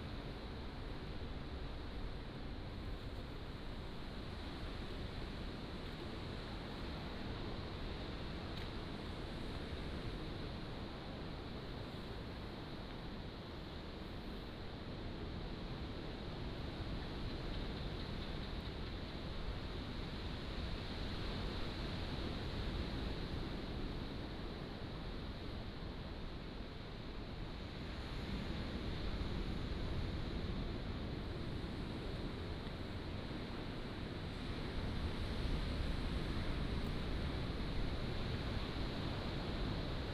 公舘村, Lüdao Township - Standing on the edge of the cave
Standing on the edge of the cave, Sound of the waves